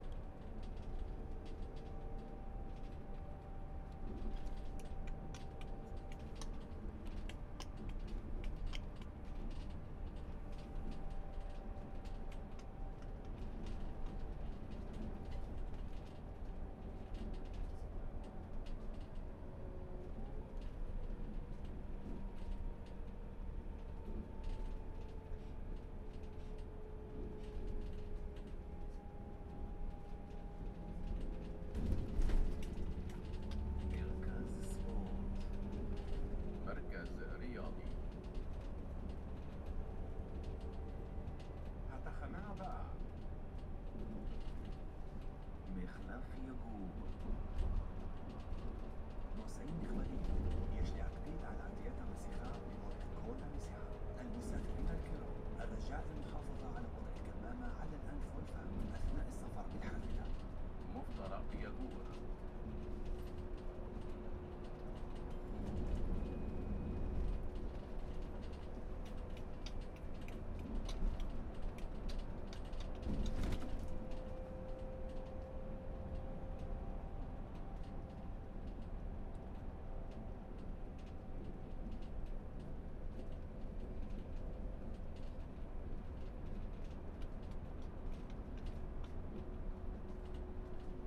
yagur junction - bus ambience
inside a walking bus, bus stop announcing sounds, someone getting out, and beatbox attempts